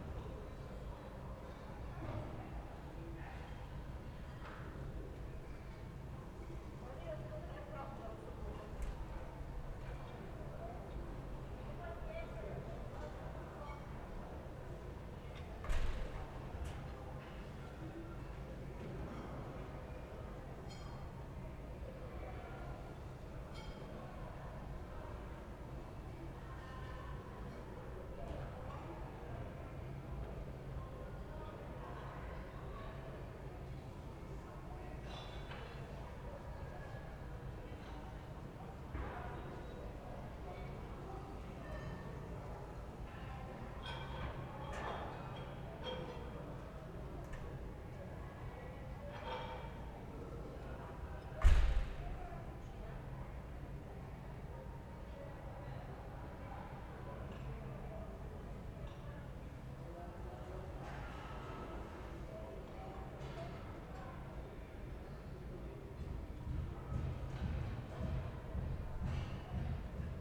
Ascolto il tuo cuore, città. I listen to your heart, city. Several chapters **SCROLL DOWN FOR ALL RECORDINGS** - Sunday afternoon with passages of photo reliefs plane in the time of COVID19 Soundscape
"Sunday afternoon with passages of photo reliefs plane in the time of COVID19" Soundscape
Chapter LXV of Ascolto il tuo cuore, città. I listen to your heart, city.
Sunday May 3rd 2020. Fixed position on an internal (East) terrace at San Salvario district Turin, ffity four days after emergency disposition due to the epidemic of COVID19.
Start at 0:55 p.m. end at 2:09 p.m. duration of recording 01:14:32
Piemonte, Italia, 3 May 2020